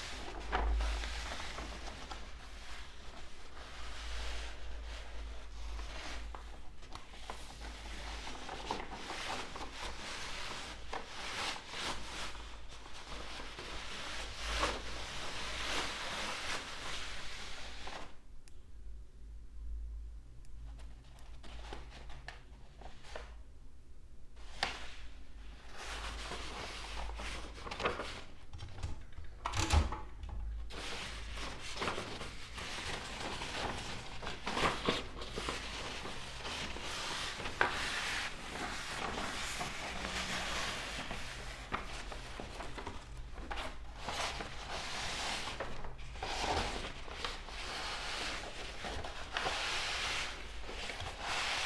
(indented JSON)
{
  "title": "Mladinska, Maribor, Slovenia - several meters long paper with typed words",
  "date": "2013-06-05 09:26:00",
  "description": "pulling paper with 18 textual fragments out of typewriter\npart of ”Sitting by the window, on a white chair. Karl Liebknecht Straße 11, Berlin”\nroom, sounds of paper",
  "latitude": "46.56",
  "longitude": "15.65",
  "altitude": "285",
  "timezone": "Europe/Ljubljana"
}